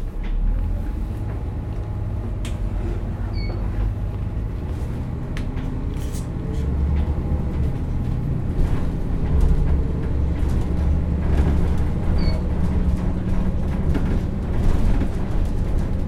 Postauto Nunningen, Kanton Solothurn - Postauto Nunningen
Ankunft Postauto in Nunningen, kleines Dorf im Kanton Solothurn, Sonntags hat die Bäckerei offen, ansonsten nur Kirchgänger.innen und Wander.innen
Nunningen, Schweiz, 12 June 2011